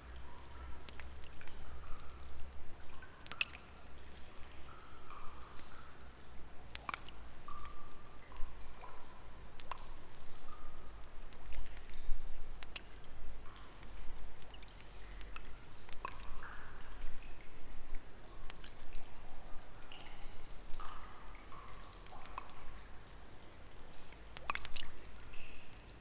Sounds inside cave/Vajkard/International Workshop of Art and Design/Zoom h4n